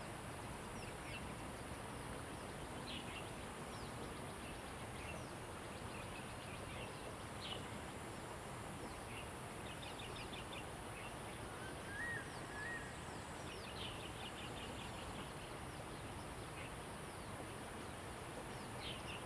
南坑一號橋, 埔里鎮成功里 - Bird sounds
Bridge, Bird sounds
Zoom H2n MS+XY